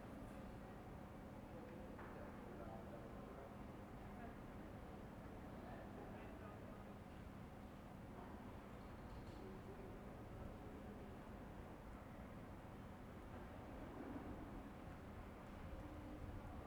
{"date": "2021-01-31 12:35:00", "description": "\"Winter Sunny Sunday, reading book on terrace with radio in the time of COVID19\": soundscape.\nChapter CLV of Ascolto il tuo cuore, città. I listen to your heart, city\nSunday January 31th 2021. Fixed position on an internal terrace at San Salvario district Turin, reading “Répertoire des effets sonores”: at the end RAI RadioTre transmits intersting contents about Radio and live concerts in the pandemic era. Almost three months of new restrictive disposition due to the epidemic of COVID19.\nStart at 00:35 P.m. end at 01:38 p.m. duration of recording 01:03:22", "latitude": "45.06", "longitude": "7.69", "altitude": "245", "timezone": "Europe/Rome"}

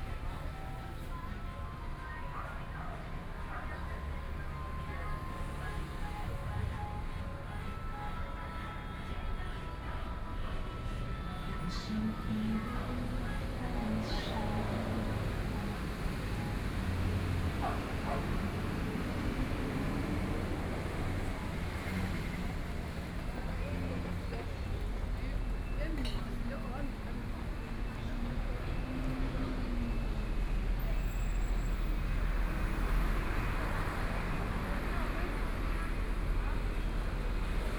{"title": "Zhongzheng Rd., Shilin - Walking in the street", "date": "2013-11-11 20:39:00", "description": "Walking in the street, Direction to the MRT station, Binaural recordings, Zoom H6+ Soundman OKM II", "latitude": "25.09", "longitude": "121.53", "altitude": "10", "timezone": "Asia/Taipei"}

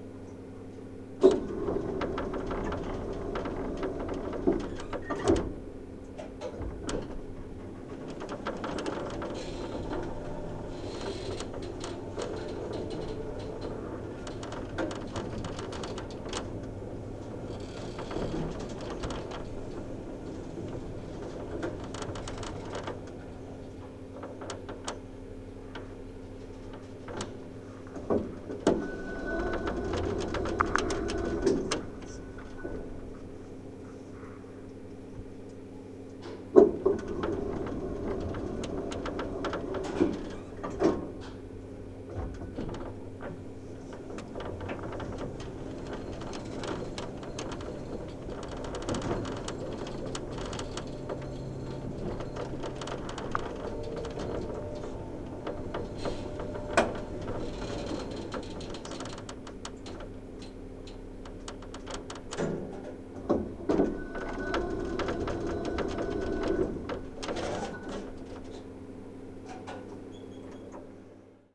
{"title": "Tallinn, Baltijaam hotel elevator - Tallinn, Baltijaam hotel elevator (recorded w/ kessu karu)", "date": "2011-04-20 16:11:00", "description": "hidden sounds, contact mic recording inside a restricted hotel elavator near Tallinns main train station.", "latitude": "59.44", "longitude": "24.74", "timezone": "Europe/Tallinn"}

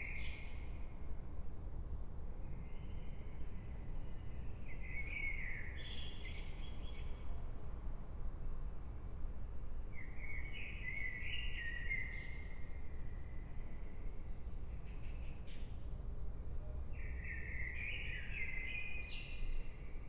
{"title": "Berlin Bürknerstr., backyard window - blackbird, aircraft", "date": "2017-05-03 20:45:00", "description": "quiet evening, it's cold, a blackbird is singing, an aircraft is passing by\n(SD702, S502ORTF)", "latitude": "52.49", "longitude": "13.42", "altitude": "45", "timezone": "Europe/Berlin"}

